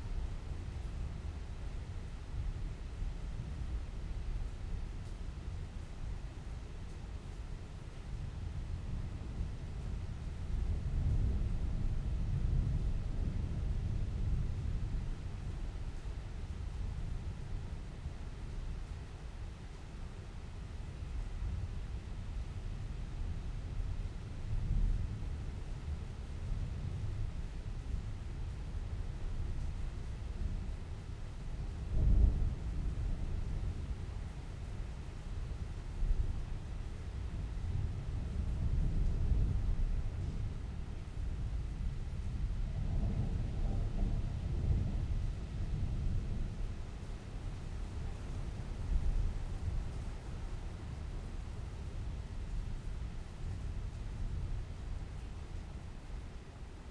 Luttons, UK - inside church ... outside approaching thunderstorm ...
inside church ... outside approaching thunderstorm ... open lavalier mics on T bar on mini tripod ... background noise ...